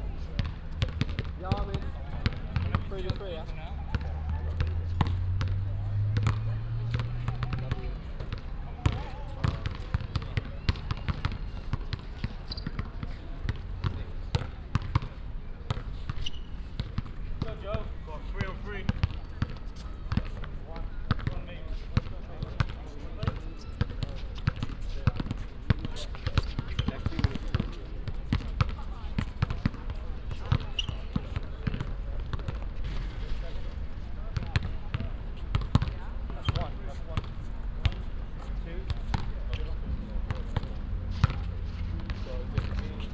The City of Brighton and Hove, Vereinigtes Königreich - Brighton, public basketball field
At the Brighton seaside at a public basketball field. The sound of bouncing balls echoing on the concrete floor, squeeking sport shoes plus voices of the players and passengers.
international city scapes - topographic field recordings and social ambiences
The City of Brighton and Hove, UK